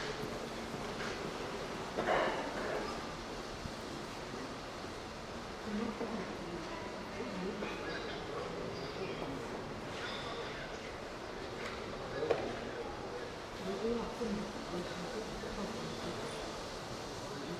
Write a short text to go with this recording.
The station of the MCC "Ploshad Gagarina". You can hear people talking on the platform, the train pulls up, announces its arrival, the doors open, people are actively walking, then the doors close and the train leaves. You can hear people talking again. Covered platform.